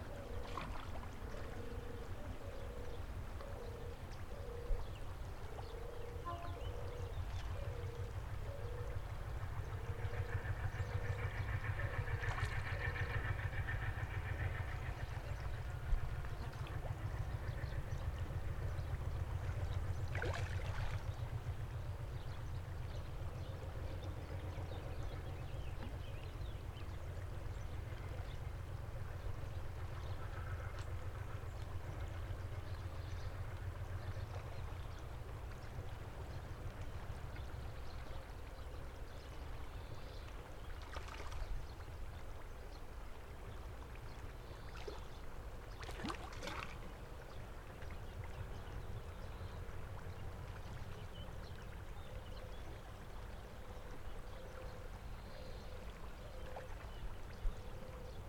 Nagozelo do Douro, praia fluvial - Nagozelo, rio, manha
Manha ao pe do rio em Nagozelo do Douro. Mapa Sonoro do Rio Douro. Morning soundscape in Nagozelo do Douro, Portugal. Douro River Sound Map.
17 August 2010